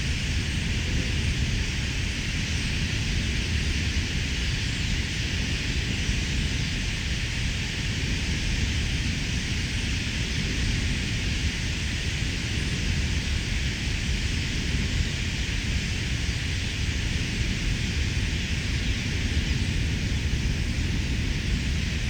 Ham Wall Nature Reserve
600,000 Starlings taking off after sunrise